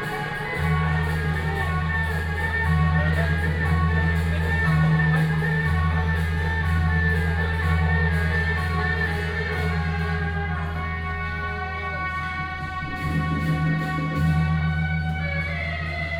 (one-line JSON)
{"title": "Daren St., Tamsui Dist. - temple fair", "date": "2017-05-30 22:24:00", "description": "temple fair, “Din Tao”ßLeader of the parade", "latitude": "25.18", "longitude": "121.44", "altitude": "45", "timezone": "Asia/Taipei"}